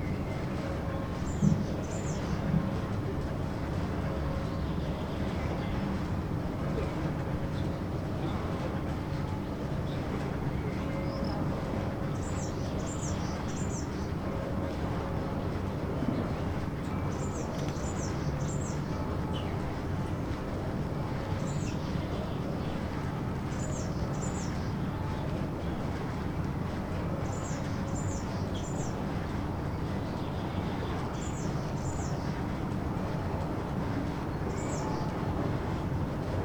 burg/wupper, steinweg: sesselbahn - the city, the country & me: under a supporting tower of a chairlift
rope of chairlift passes over the sheaves, church bells, singing bird
the city, the country & me: may 6, 2011